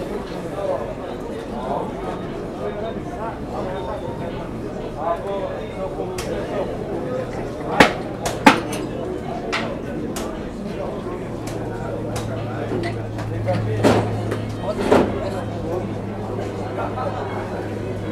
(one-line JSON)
{"title": "Kalenic Market, Belgrade, Serbia - kalenic pijaca", "date": "2013-08-30 18:03:00", "description": "'after sales gatherings': chit-chats around chess and jelen", "latitude": "44.80", "longitude": "20.48", "altitude": "141", "timezone": "Europe/Belgrade"}